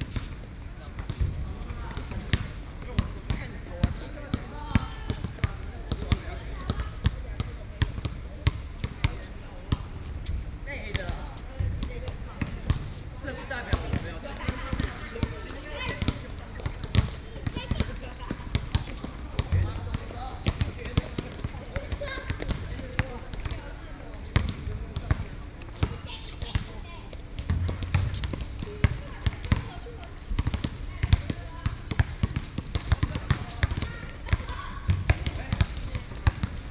復興公園, 北投區, Taipei City - soundmap20121124-1
in the park, Play basketball, / Binaural Recordings
Taipei City, Taiwan, 24 November 2012